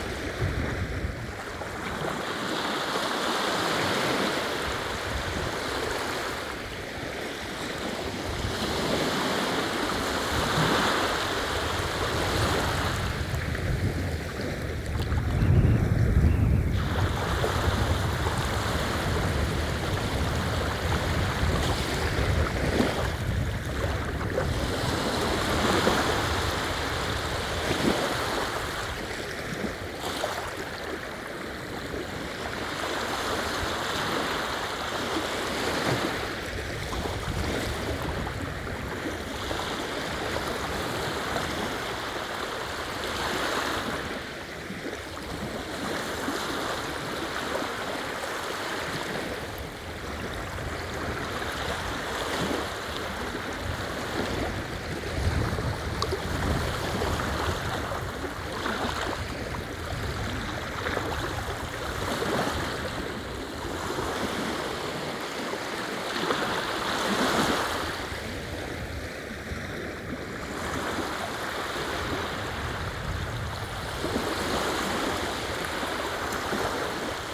Beach, Jantar, Poland - (843 AB MKH) Waves at the beach
Recording of waves at the beach. This has been done simultaneously on two pairs of microphones: MKH 8020 and DPA 4560.
This one is recorded with a pair of Sennheiser MKH 8020, 17cm AB, on Sound Devices MixPre-6 II.